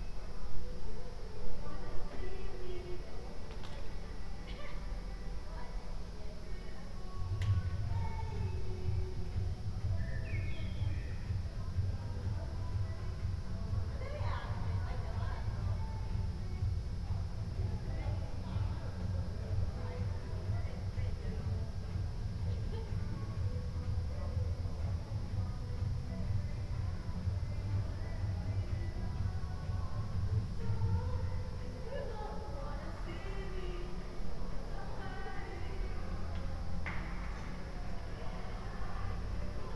Ascolto il tuo cuore, città, I listen to your heart, city. Several chapters **SCROLL DOWN FOR ALL RECORDINGS** - Easter Monday afternoon with laughing students in the time of COVID19: Soundscape.
"Easter Monday afternoon with laughing students in the time of COVID19": Soundscape.
Chapter CLXVI of Ascolto il tuo cuore, città. I listen to your heart, city
Monday, April 5th, 2021. Fixed position on an internal terrace at San Salvario district Turin, One year and twenty-six days after emergency disposition due to the epidemic of COVID19.
Start at 3:58 p.m. end at 4:23 p.m. duration of recording 25’00”
Piemonte, Italia, 5 April 2021